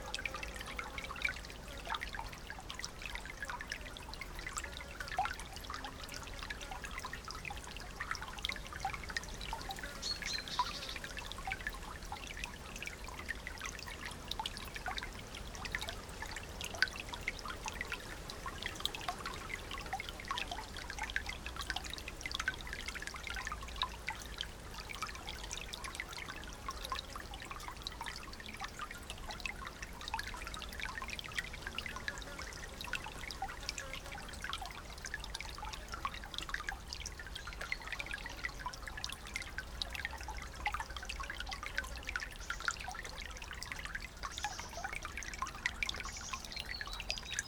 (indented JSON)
{
  "title": "Stoborough Heath National Nature Reserve, UK - Tiny stream sounding like a musical instrument",
  "date": "2020-09-20 15:35:00",
  "description": "A beautiful Sunday afternoon walk across the reserve, Linnets and a Wheatear, with Ravens and a myriad of insects scratching away in the landscape. A small wooden bridge strides a tiny stream with the most wonderful tinkling water sounds spilling into the air as we cross. Sony M10, inbuilt mics.",
  "latitude": "50.67",
  "longitude": "-2.09",
  "altitude": "11",
  "timezone": "Europe/London"
}